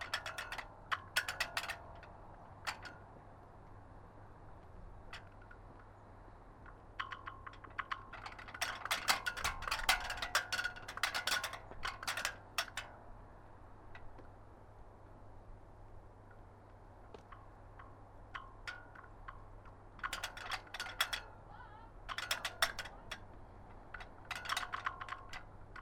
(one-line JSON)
{"title": "Knokke-Heist, Belgique - Flag in the wind", "date": "2018-11-17 17:50:00", "description": "On a sunny and cold winter evening, sound of a flag in the wind.", "latitude": "51.36", "longitude": "3.33", "altitude": "7", "timezone": "Europe/Brussels"}